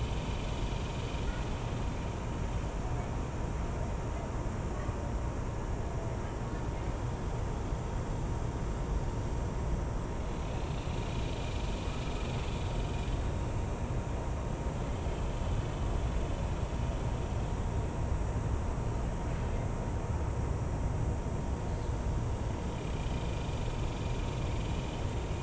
Shoal Creek Greenbelt Trail, Austin, TX, USA - Shoal Creek at dusk
On World Listening Day 2018, Phonography Austin hosted a soundwalk along Shoal Creek, an urban waterway. I left my recorder, a Tascam DR-22 with a Rycote Windjammer, behind, hidden in a bush, about three feet off of the creekbed, in a location that has enough foliage to dampen some of the urban drone.